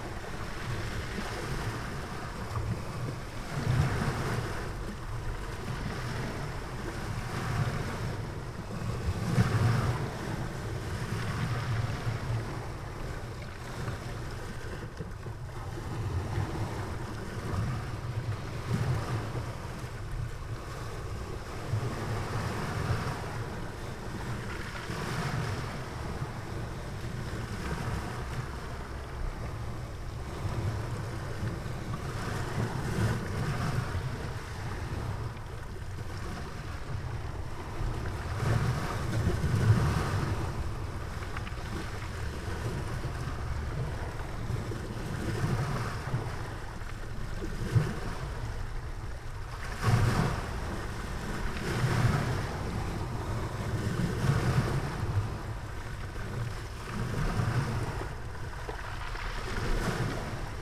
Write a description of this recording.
Grabación metiendo los micros dentro de la tronera del bunker. El acceso al búnker está imposible por estar colmatado